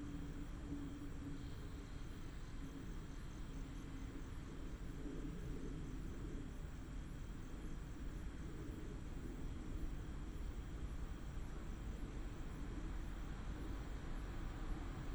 in the Railroad Crossing, Traffic sound, The train passes by, Binaural recordings, Sony PCM D100+ Soundman OKM II